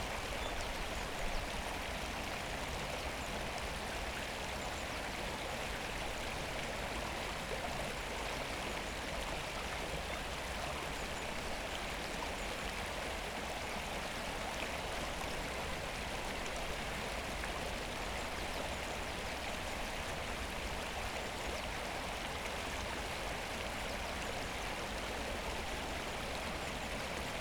Wuhleteich, Berlin - river Wuhle flow
river Wuhle water flow, near pond (Wuhleteich)
(SD702, SL502 ORTF)